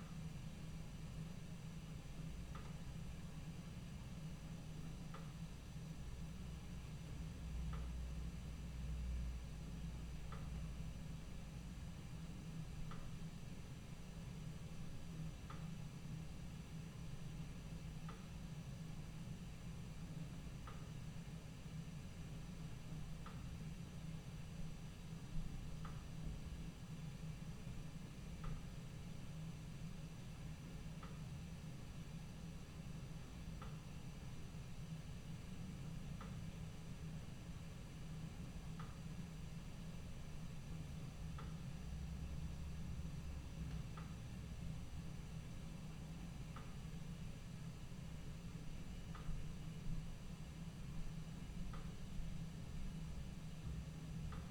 Rijeka, Croatia, LPG flow - LPG flow
PrimoMic EM172 -> Sony PCM-D50
Grad Rijeka, Primorsko-Goranska županija, Hrvatska, 17 March